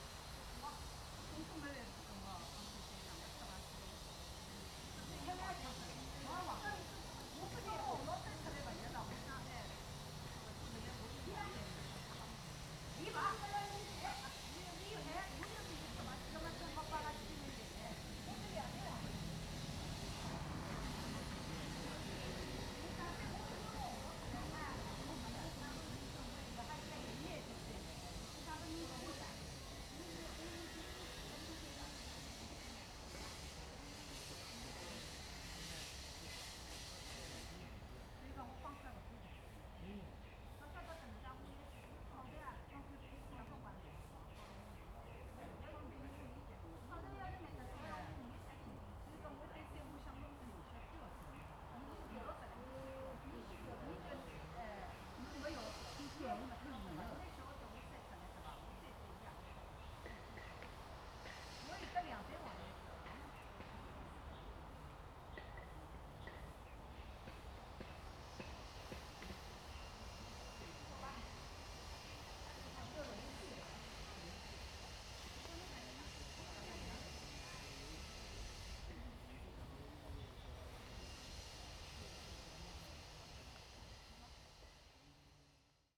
太武山, Kinmen County - Tourist Area
the wind, Traffic Sound, Tourists
Zoom H2n MS +XY
福建省, Mainland - Taiwan Border